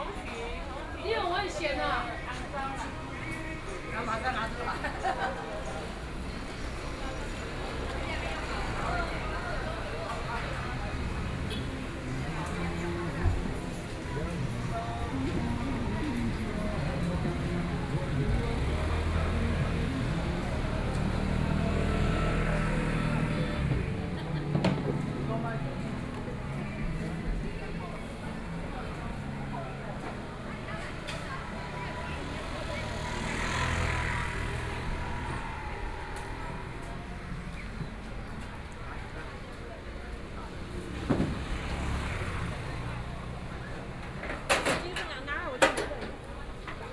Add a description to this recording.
Walking through in a variety ofthe mall, Binaural recordings, Zoom H4n+Rode NT4 + Soundman OKM II